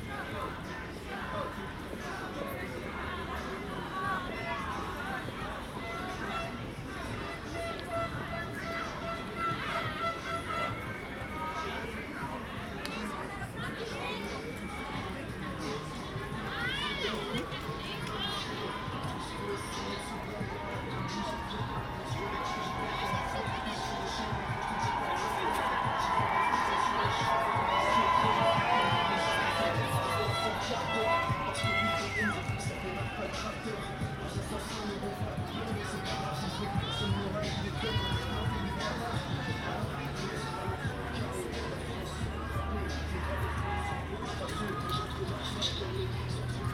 Koningsstraat, Brussel, Belgium - Youth for Climate March
Youth for Climate March, chanting, singing, music, horns. Recorded Zoom H2n